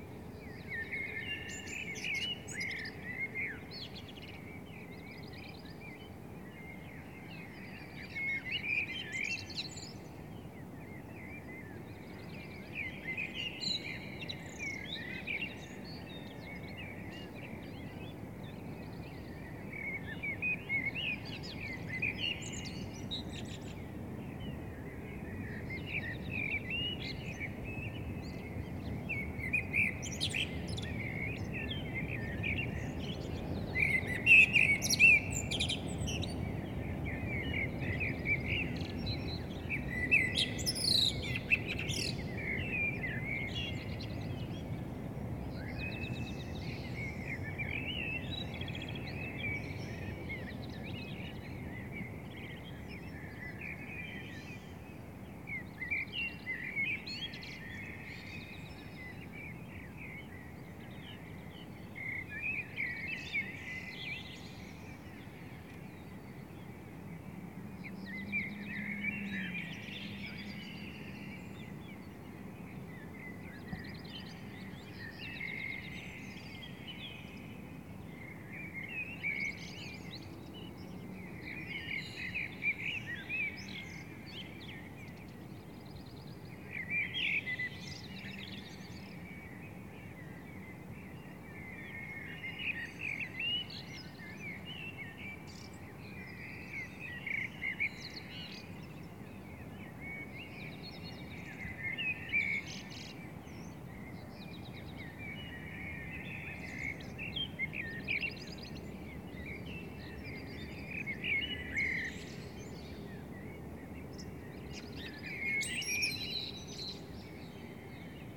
Pflügerstraße, Berlin, Deutschland - Birds at Dawn
If you can't sleep, you can still record - and even at a time when you would normally sleep...
And it's beautiful to do that.
From top floor window to backyard.
On a Sony PCM100 with mics in pan mode
8 June 2020, ~04:00